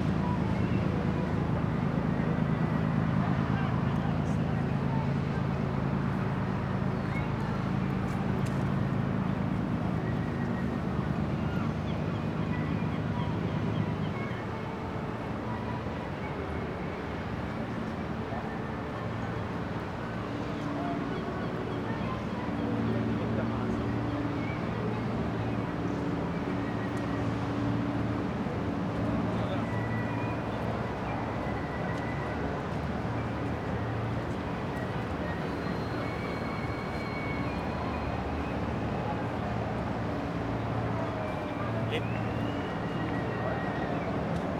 Porto, Vila Nova de Gaia - over Douro river
recorded on a hill. many sounds carried over water from the other riverbank. fans of a visiting soccer team singing and cheering in a restaurant a few hundred meters away. boats cruising the river. sightseeing helicopter buzzing over city.